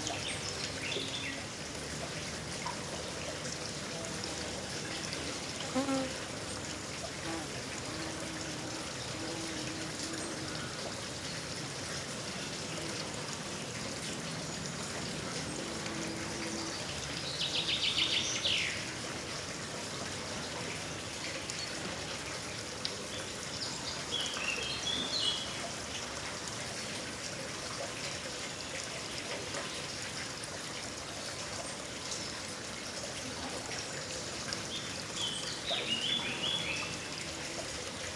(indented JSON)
{
  "title": "Falkensteiner Höhle - Vor der Höhle",
  "date": "2009-04-05 15:09:00",
  "latitude": "48.51",
  "longitude": "9.45",
  "altitude": "625",
  "timezone": "Europe/Berlin"
}